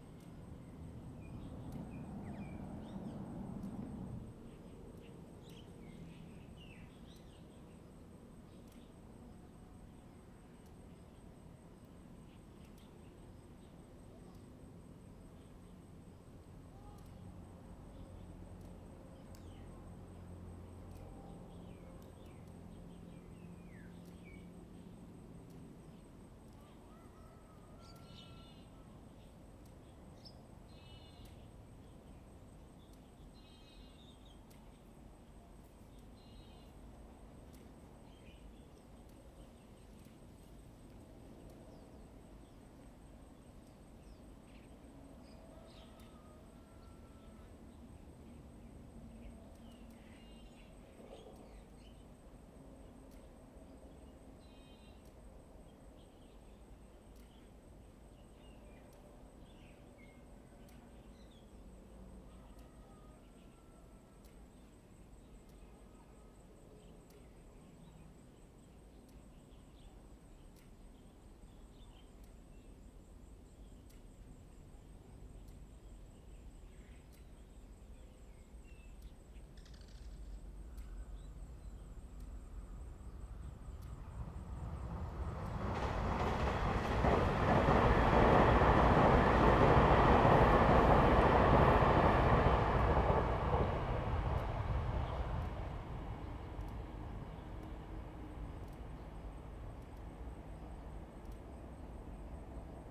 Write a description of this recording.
Under the bridge, Birds, Cock, the sound of Train traveling through, The weather is very hot, Zoom H2n MS+XY